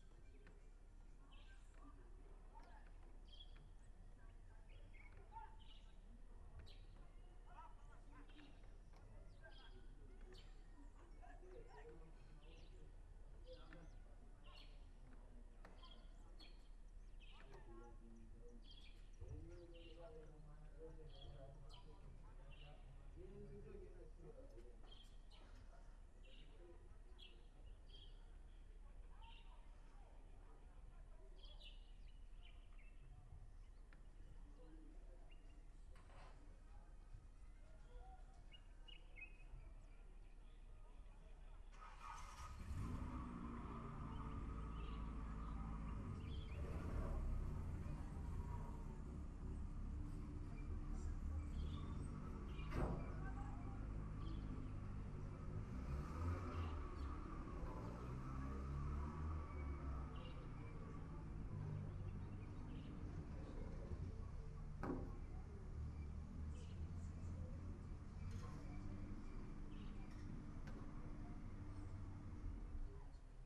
world listening day, birds, truck, frogs, church, humans, plane